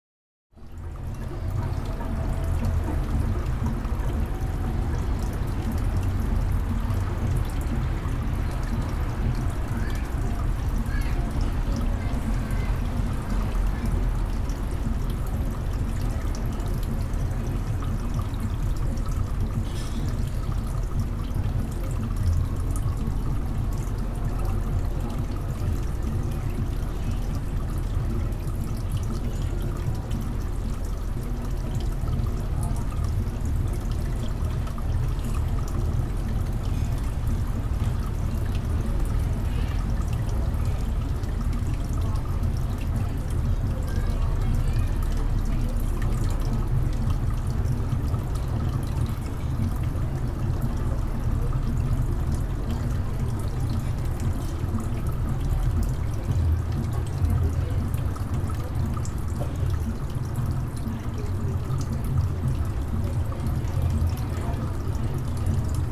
Avenue Duluth O, Montréal, QC, Canada - Santropol garden
Recorded with a H4n in stereo mode, take from the garden of the Santropol restaurant.
Drums from the Mont Royal.
Fountain in the garden.
Trafic.
People talking and passing by.
Dishes.